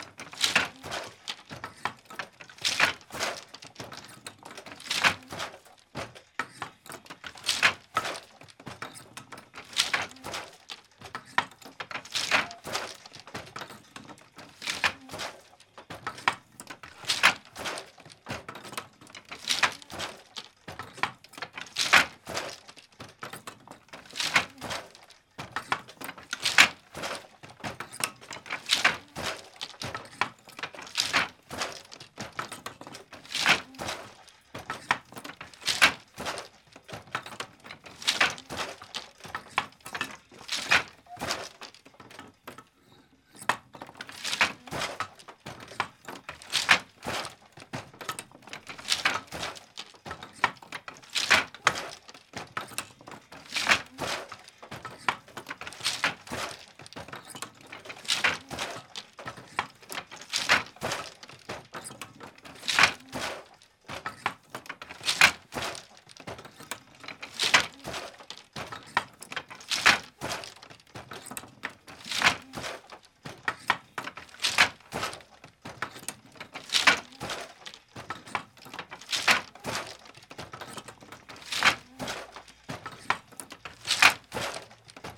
London Borough of Islington, Greater London, UK - Wendy Morris Weaving
This is a recording of Wendy Morris of the Handweaver's Studio, London, weaving on her loom. Unfortunately the recording was actually made in her home and not the noisy environment of the Handweaver's Studio and Gallery, but I don't know her exact address and so have located the sound to the studio and gallery since she is the custodian there. If there were no customers in the shop, the rhythm of her weaving would ostensibly sound very similar... at least this way you can get an idea of the sounds of Wendy Morris weaving on a floor loom!
March 2012